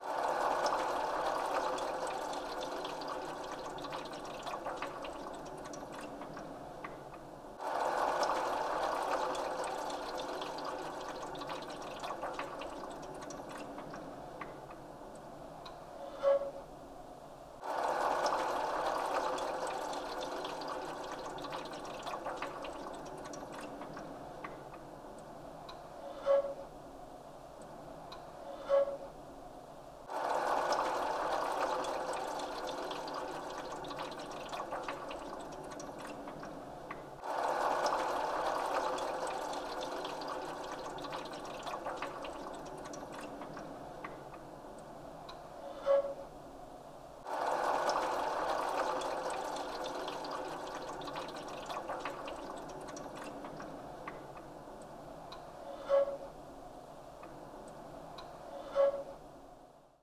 East Austin, Austin, TX, USA - Black Land AC
Music for Air conditioners: recorded with a Marantz PMD661 and a pair of DPA 4060s.